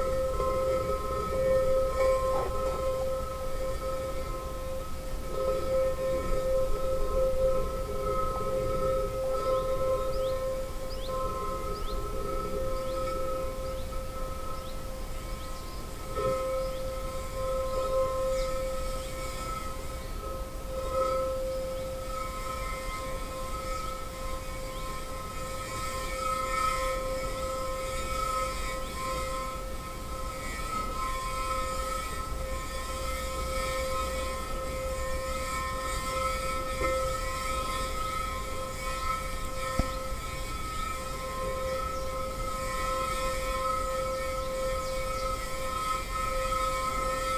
Hitoshi Kojo 'playing' a found object located in the woods next to La Pommerie. Recorded during KODAMA residency August 2009